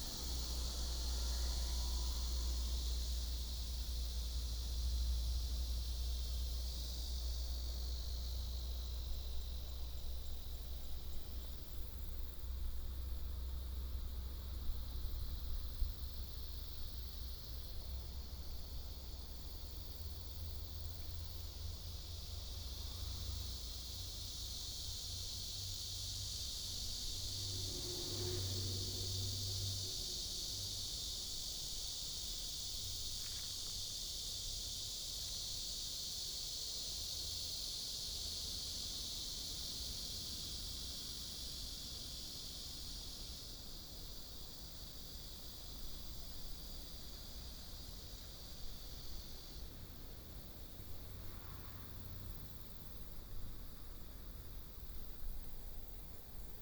壯圍鄉永鎮村, Yilan County - Bamboo forest
Bamboo forest, Sound wave, Windbreaks, Birdsong sound, Small village, Cicadas sound
Sony PCM D50+ Soundman OKM II